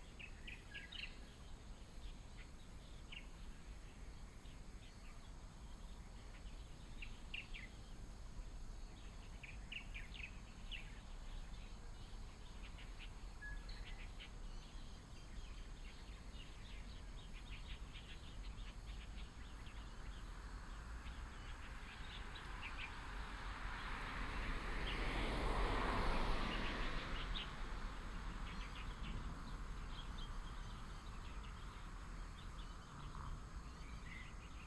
birds call, Dog sounds, Traffic sound